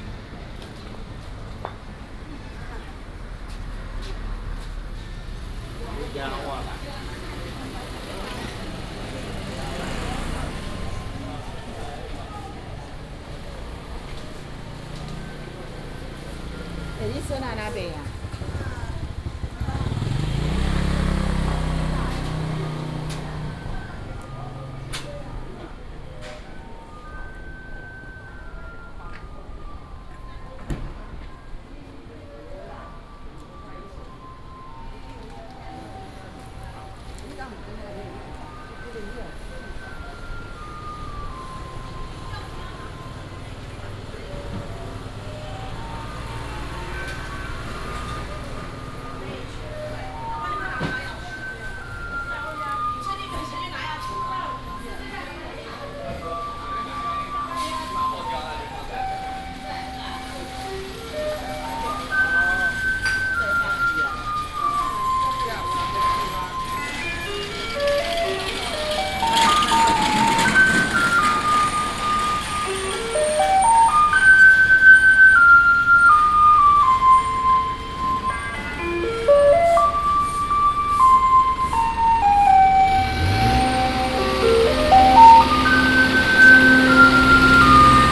南館公有零售市場, Nanxing St., Yilan City - Walking in the market
Walking in the market, Traffic sound, Garbage truck arrives.
Yilan County, Taiwan, 7 December 2016